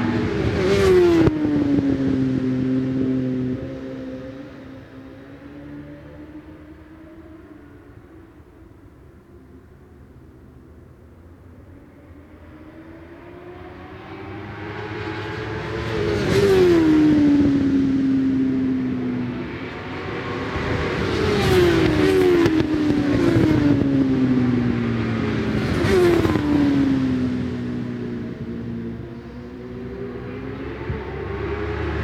West Kingsdown, UK - british superbikes 2002 ... superstock ...
british superbikes 2002 ... superstock second qualifying ... one point stereo mic to minidisk ...